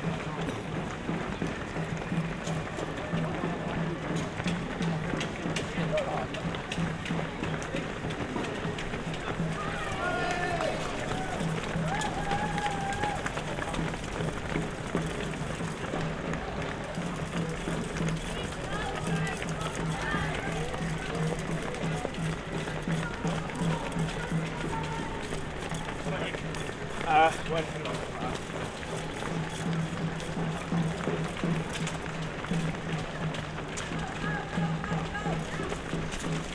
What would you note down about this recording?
runners on kottbuser damm, berlin